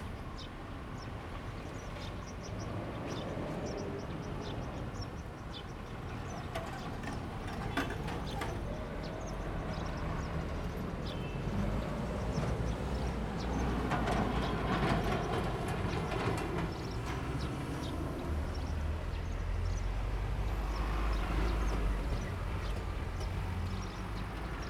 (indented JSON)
{
  "title": "大村鄉擺塘村, Dacun Township - in the railroad crossing",
  "date": "2017-04-06 14:31:00",
  "description": "in the railroad crossing, The train runs through\nZoom H2n MS+XY",
  "latitude": "23.99",
  "longitude": "120.56",
  "altitude": "23",
  "timezone": "Asia/Taipei"
}